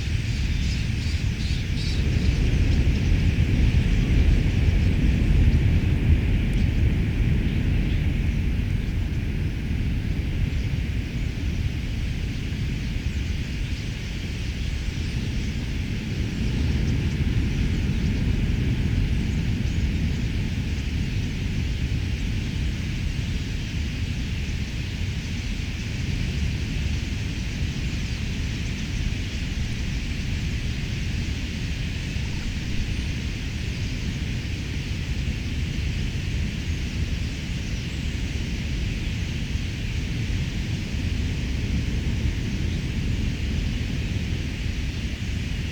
{"title": "Ham Wall Nature Reserve", "date": "2011-11-20 07:12:00", "description": "600,000 Starlings taking off after sunrise", "latitude": "51.16", "longitude": "-2.78", "altitude": "1", "timezone": "Europe/London"}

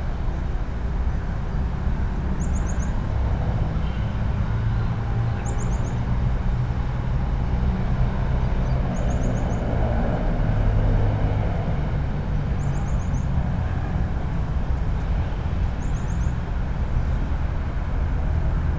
{"title": "Kos, Greece, meadow", "date": "2016-04-13 15:20:00", "description": "small meadow in the town. I have normalized the recording for louder sounds...", "latitude": "36.89", "longitude": "27.29", "altitude": "9", "timezone": "Europe/Athens"}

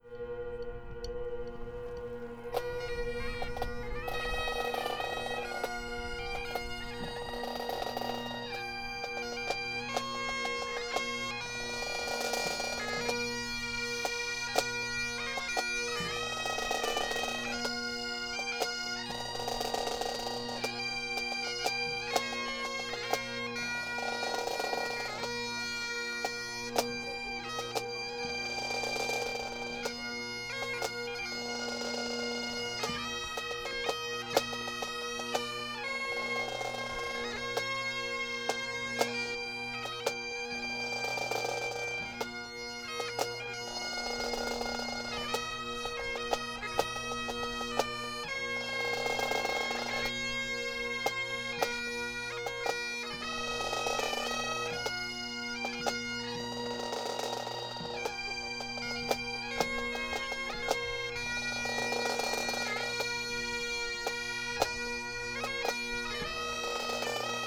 {"title": "Berlin, Tempelhofer Feld - bagpipe players practising", "date": "2020-05-18 19:55:00", "description": "Berlin Tempelhofer Feld, bagpipe players practising\n(Sony PCM D50)", "latitude": "52.48", "longitude": "13.40", "altitude": "34", "timezone": "Europe/Berlin"}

